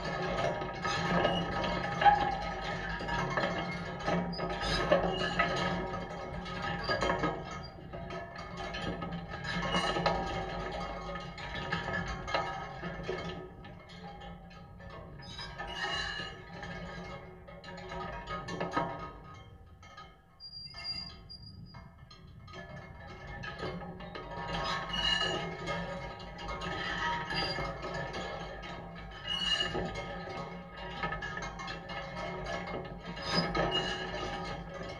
Kaimynai, Lithuania, sound sculpture
metallic wind/sound sulpture not so far from the beach
Alytaus apskritis, Lietuva, 13 June 2019, ~14:00